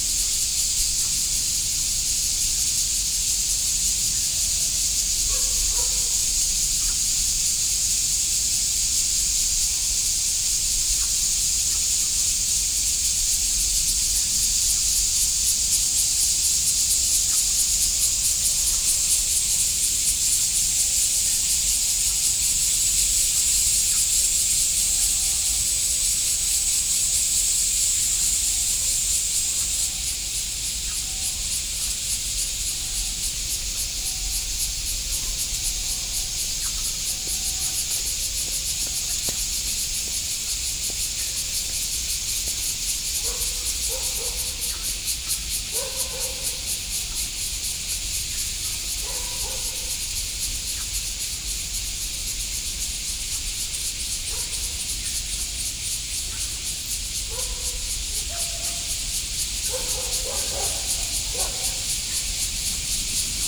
Beitou, Taipei - Community Park
Summer evening, the park, Cicadas chirping, The frogs, Dog barking, Binaural recordings